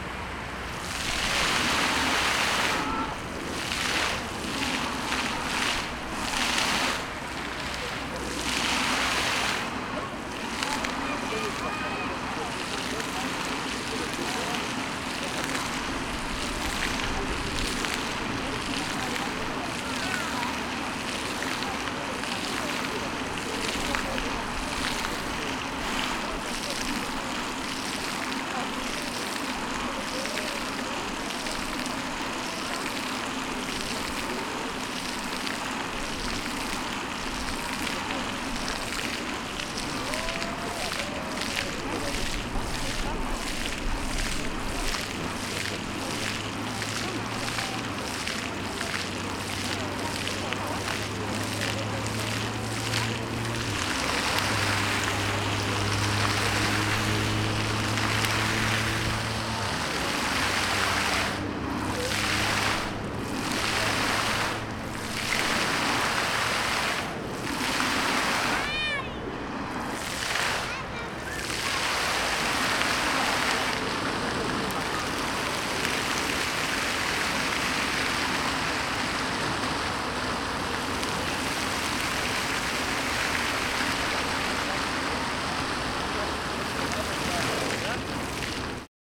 Skwer 1 Dywizji Pancernej WP, Warszawa, Pologne - Multimedialne Park Fontann (a)
Multimedialne Park Fontann (a), Warszawa
Warsaw, Poland, 17 August 2013, ~12:00